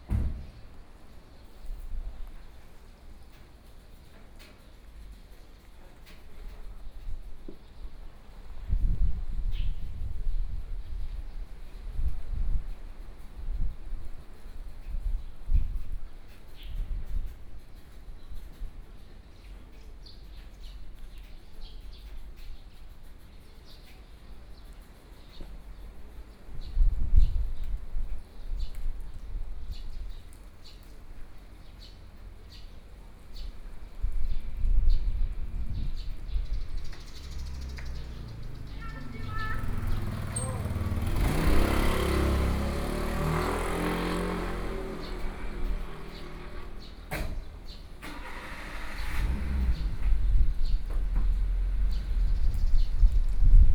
福鎮廟, 壯圍鄉新社村 - In the temple
In the temple plaza, Traffic Sound, Birdsong, Small village
Zoom H6 MS+ Rode NT4